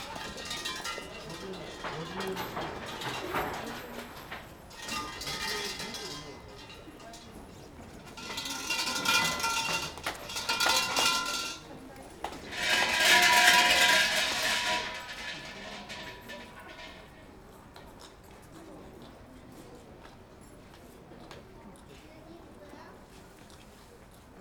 New Year's Day Prayers Before Yasaka Shrine
福岡県, 日本, 1 January 2019